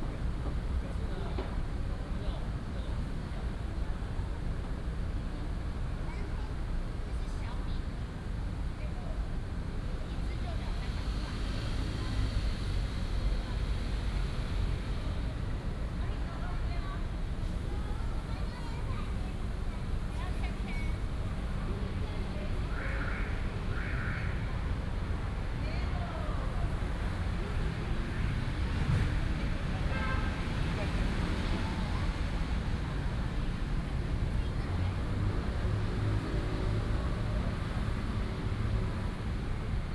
仁慈公園, Da'an District - in the Park
in the Park, Traffic noise